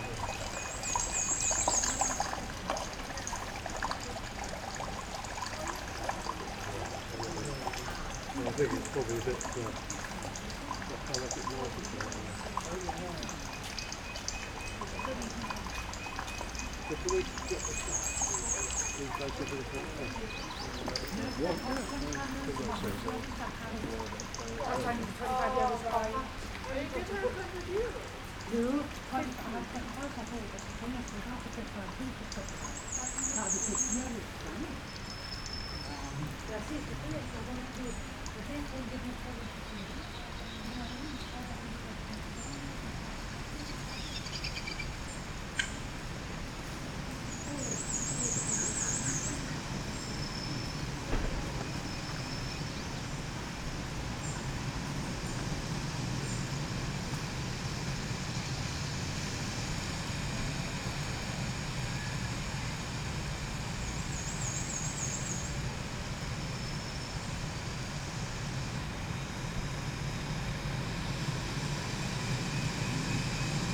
Alnwick Gardens, Alnwick, UK - Forgotten Garden Adventure Golf soundtrack ...
Adventure Golf ... Alnwick Gardens ... recording of soundtrack ..? tape loop ..? sound installation ..? as background to this feature ... stood next to one speaker recorded with open lavaliers clipped to baseball cap ... background noise of wind ... rain ... voices ... and a robin ...
25 September 2017, 12:30